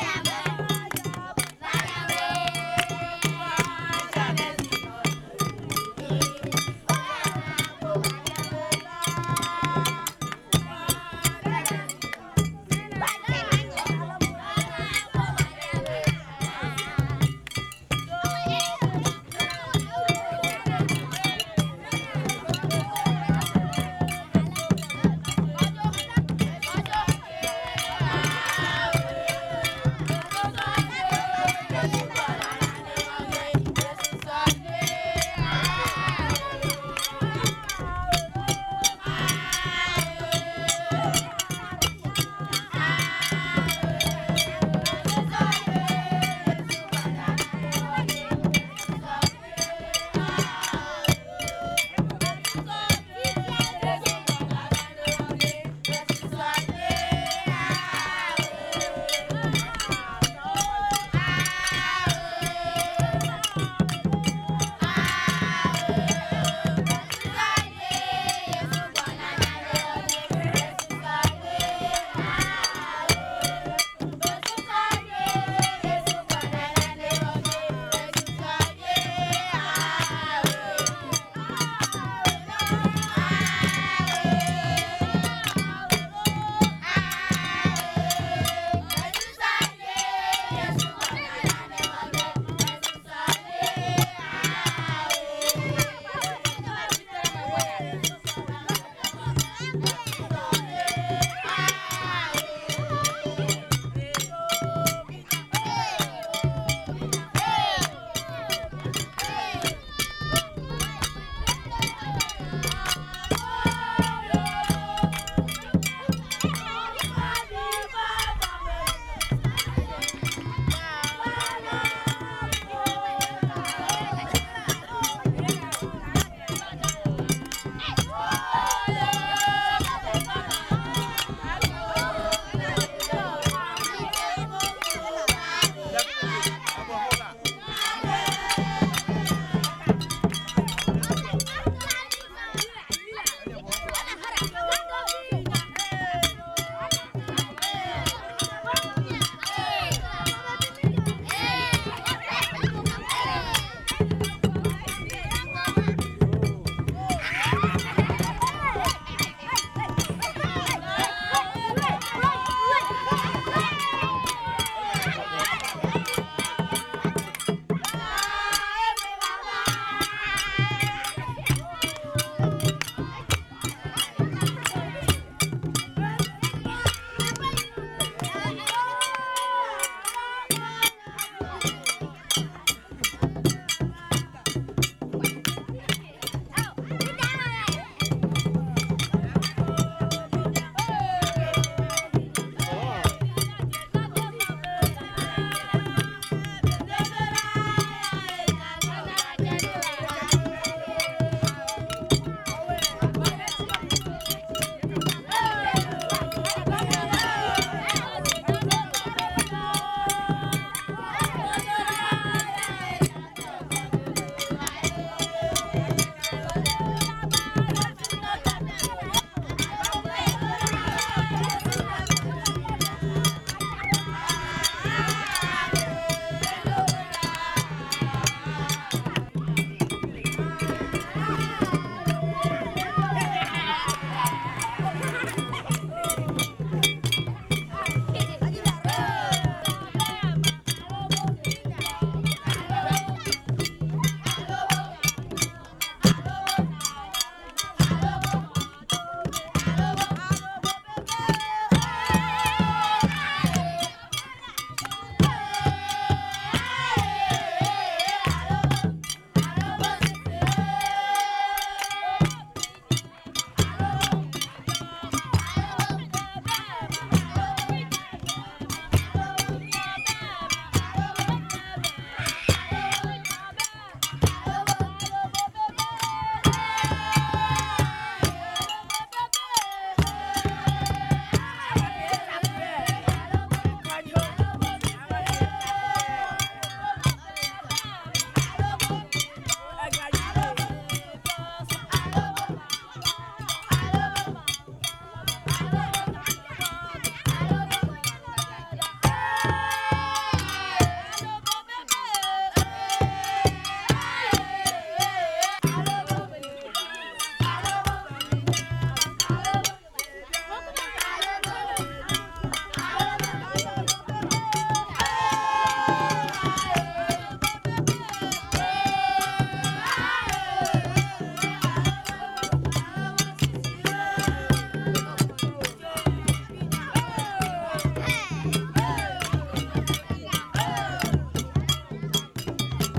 kids making fun and music in the evening. Nearby a small shop that sells strong liquor
Ghana